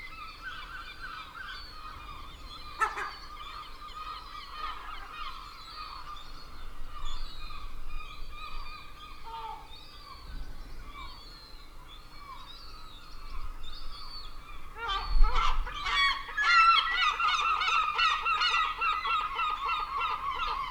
King St, Aldeburgh, Suffolk, UK - Gulls
At 2am the gulls take over, robbing waste bins and combing every inch for food scraps left by holidaymakers of the day.
I realised too late that my fur covered lavaliers might look like a tasty treat to a hungry gull but luckily they survived.
Watch out for the volume peaks if you are listening with headphones.
East of England, England, United Kingdom